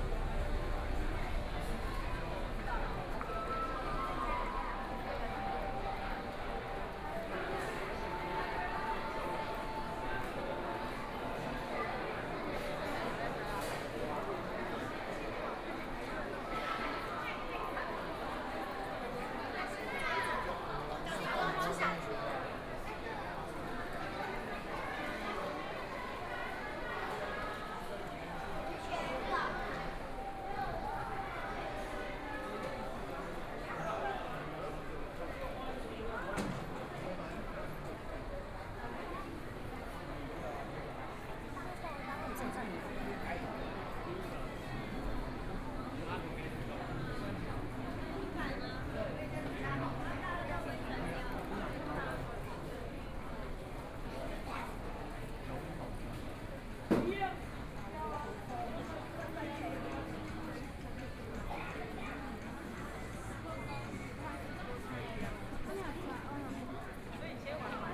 中正區黎明里, Taipei City - soundwalk
Pedestrian, Various shops voices, Walking through the underground mall, Walking through the station
Please turn up the volume a little
Binaural recordings, Sony PCM D100 + Soundman OKM II
Zhongzheng District, Taipei City, Taiwan, 28 February 2014, ~15:00